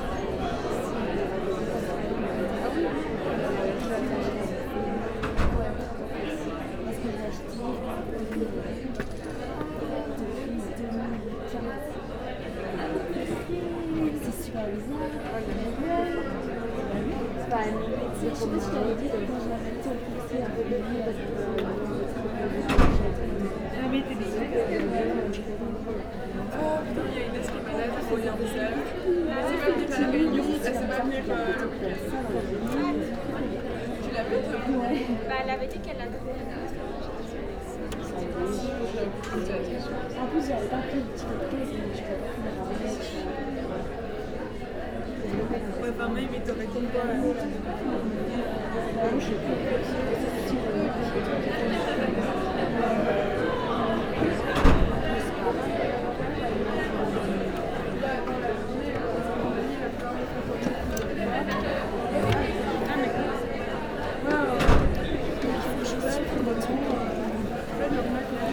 Ottignies-Louvain-la-Neuve, Belgique - Students pause
A pause in the Montesquieu auditoire, students are joking everywhere.